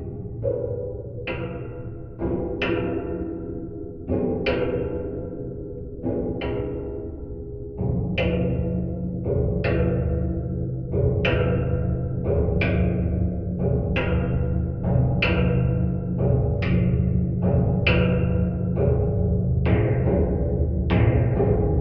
SBG, Puigneró, antigua fábrica - chimenea
Activación de la única gran chimenea que aún se conserva en el tejado de la fábrica.